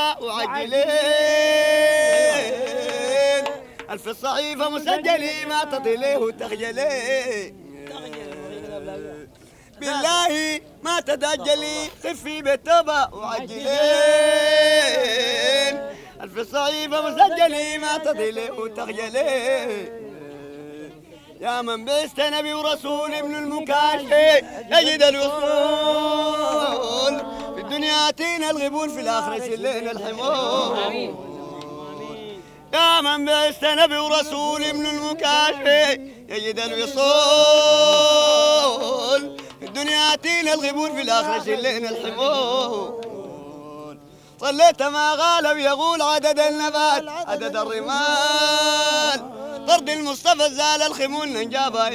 شارع الراشدين, Sudan - song before dhikr @ tomb of Sheikh Hamed an-Neel
In Omdourman, Sudan, lies the tomb of the sufi holy man Sheikh Hamed an-Neel. Every friday the believers flock together to sing and dance themselves in trance and experience the nearness of Allah. These recordings were in 1987, the democrativc gays in the history of Sudan. I do not know what happened with the sufi's when the fanatics took over governement.
ولاية الخرطوم, السودان al-Sūdān, 8 May 1987